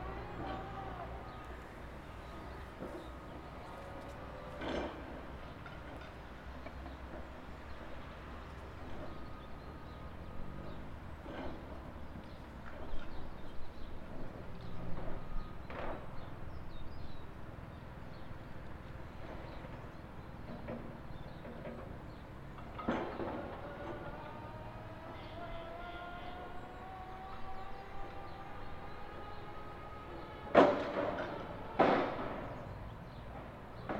Mühltal, Boppard, Deutschland - Bauarbeiten bei Boppard am Rhein - Traumschleife Elfenlay
Bauarbeiten an der Bahnstrecke.
Boppard, Germany